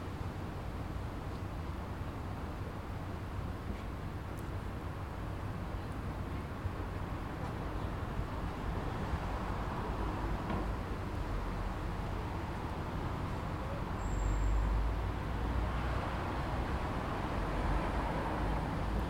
The Stile, Highfield, Southampton, UK - 008 Road noise, footsteps, voices
8 January 2017, 21:37